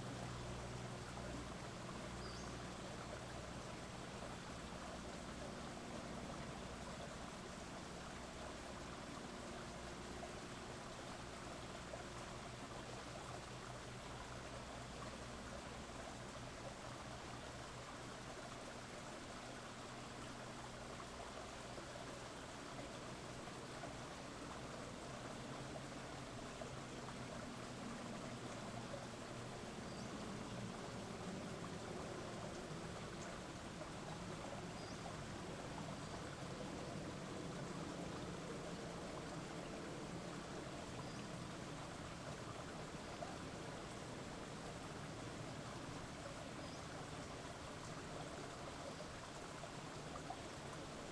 Wedgewood St, Burnaby, BC, Canada - Early July morning. A bird, some planes, and a dog.